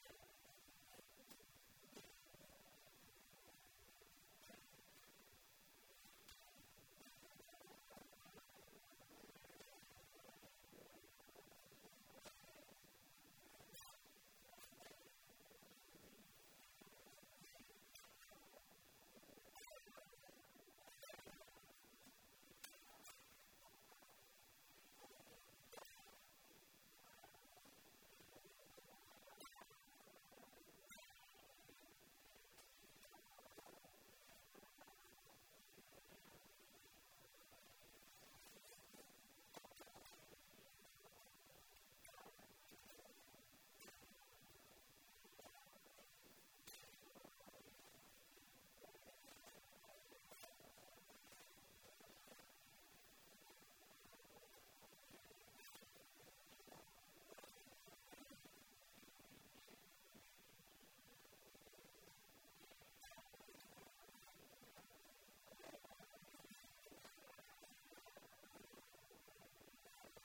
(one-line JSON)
{"title": "Kolhapur, Motibag Thalim, Kushti", "date": "2011-03-11 17:04:00", "description": "India, Maharashtra, Kolhapur, Kushti, traditional Indian wrestling, In India, wrestling takes place in a clay or dirt pit. The soil is mixed with ghee and other things and is tended to before each practice.\nTraditional Indian wrestling isn just a sport - its an ancient subculture where wrestlers live and train together and follow strict rules on everything from what they can eat to what they can do in their spare time. Drinking, smoking and even sex are off limits. The focus is on living a pure life, building strength and honing their wrestling skills.\nWrestlers belong to gyms called akharas, where wrestlers live under strict rules. Wrestlers diets consist of milk, almonds, ghee, eggs and chapattis and each wrestler has a job to do in preparing meals. The sport is on the decline, but there are still many akharas left and some dedicated people who are working to keep this ancient part of Indian culture alive.", "latitude": "16.69", "longitude": "74.22", "altitude": "577", "timezone": "Asia/Kolkata"}